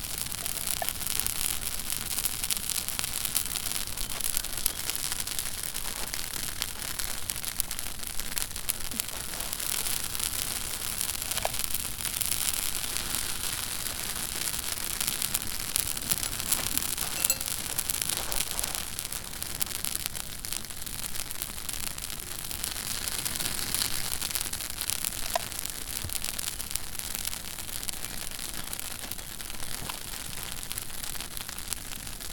After a swim in the pond there's nothing like a sizzling campfire.
County Leitrim, Connacht, Republic of Ireland, 9 June 2013